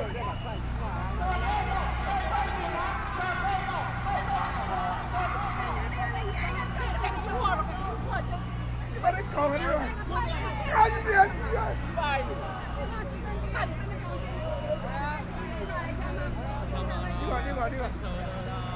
Zhongshan N. Rd., Taipei City - Shame democracy
government dispatched police to deal with students, Protest, University students gathered to protest the government, Occupied Executive Yuan
Riot police in violent protests expelled students, All people with a strong jet of water rushed, Riot police used tear gas to attack people and students
Binaural recordings